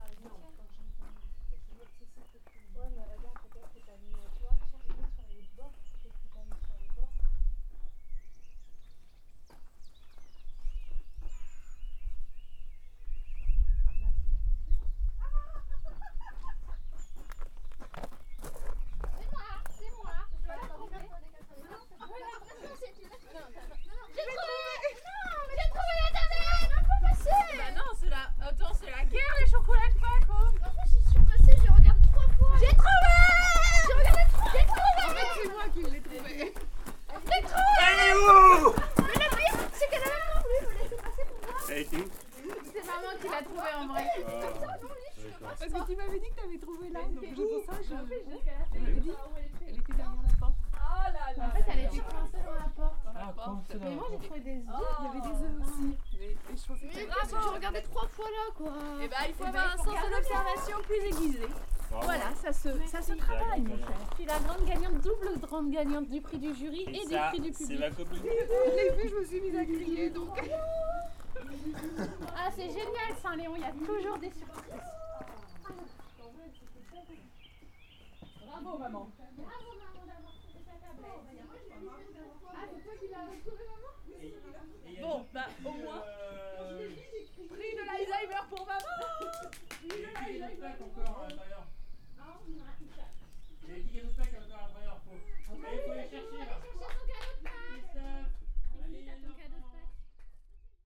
Saint-Léon-sur-Vézère, France - Les oeufs de St Leon
zoom h4 + couple ORTF superlux
16 April 2017